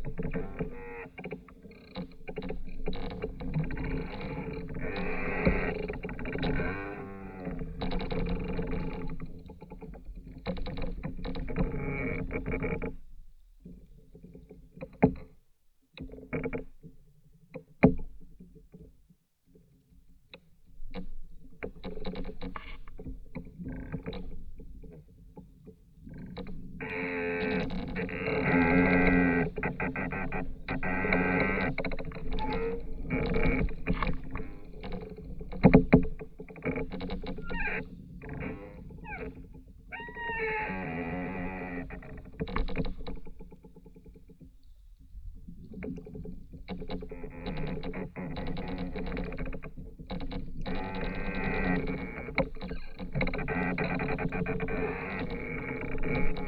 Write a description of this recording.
another contact microphone recording of the singing tree